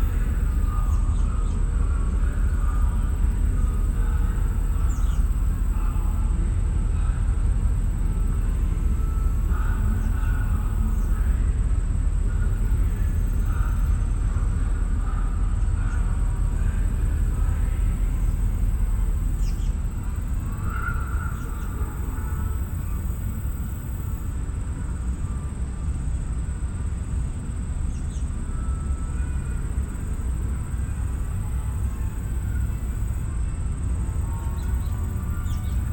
Dwight D. Eisenhower Presidential Library, Museum and Boyhood Home, S E 4th St, Abilene, KS - Plaza (Distant Concert & Museum Echo)
Near the museum, a horse and carriage passes, followed by strains of music from a concert held during the Trails, Rails & Tales festival. A slight echo can be heard, reflected from the southwest corner of the museum. Stereo mics (Audiotalaia-Primo ECM 172), recorded via Olympus LS-10.